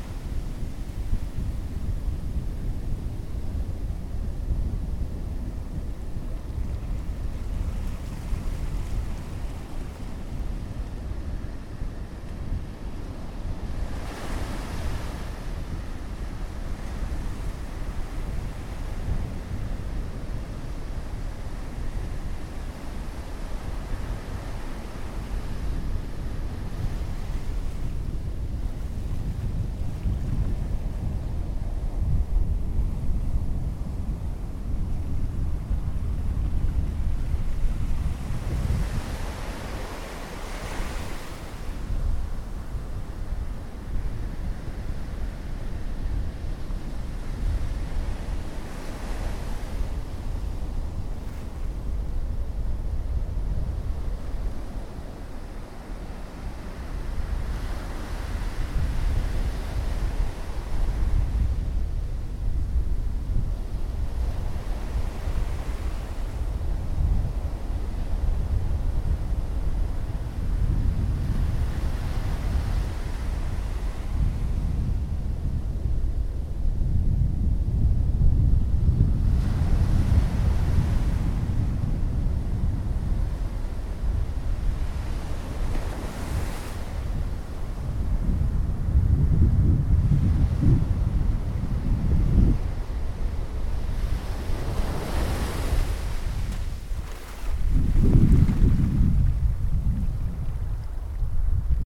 Lagoinha do Leste, Florianópolis, Santa Catarina, Brazil - Lagoinha do Leste beach sound
The sound of the Lagoinha do Leste beach before the rain drops.
recorded with a ZOOM H1